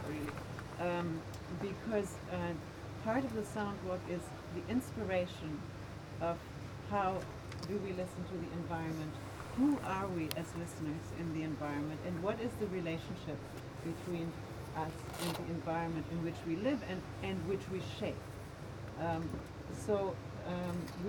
soundwalk, Koli, Finland, Suomi, Suomen tasavalta - soundwalk, Koli
Koli, soundwalk, introduction, ideas, words, Finland, WFAE, Hildegard Westerkamp, Suomi